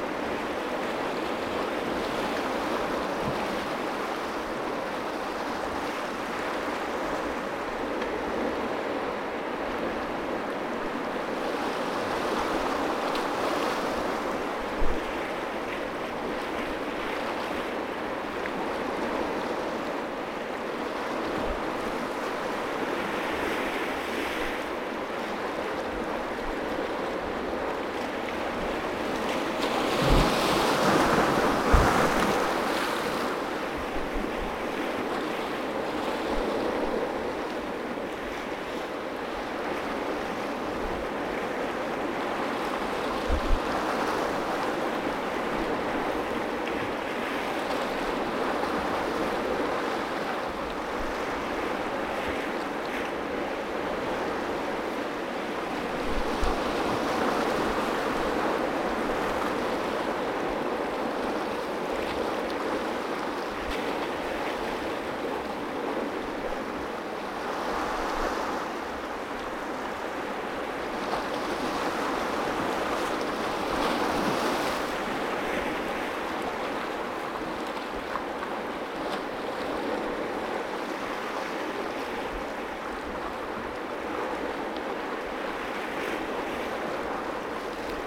Recorded with a Sound Devices 702 field recorder and a modified Crown - SASS setup incorporating two Sennheiser mkh 20 microphones.